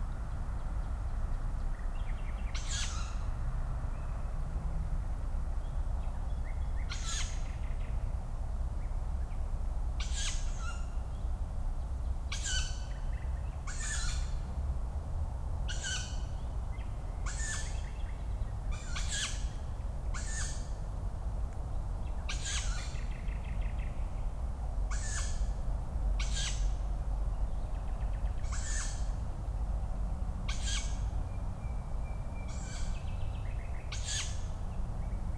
Schloßpark Berlin Buch, park ambience at night, young Tawny owls calling, a Nightingale in the background, and distant traffic noise. At 2:30 an adult is calling, and the kids are getting excited, jumping around in the trees. What to expect from city's nature?
(Sony PCM D50, DPA4060)